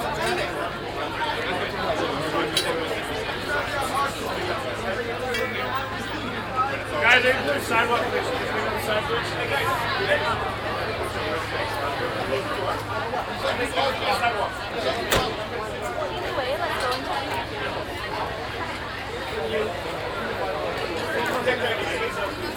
vancouver, granville street, in front of a disco
midnight in downtown. crowd standing in a row in front of a disco
soundmap international
social ambiences/ listen to the people - in & outdoor nearfield recordings